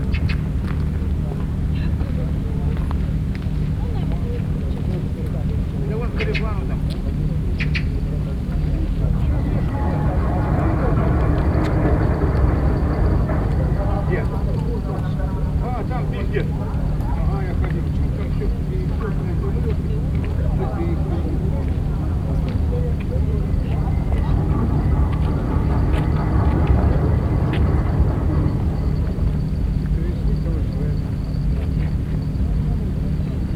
Amur river bank, Khabarovsk, Khabarovskiy kray, Russland - Fishermen talking and port rumbling at the river bank of Amur
Fishermen, port, river, pedestrians. Listened to from promenade at the beach. Binaural recording with Tascam DR-07 and Soundman OKM Klassik II.
Khabarovsk, Khabarovskiy kray, Russia, 2 November 2015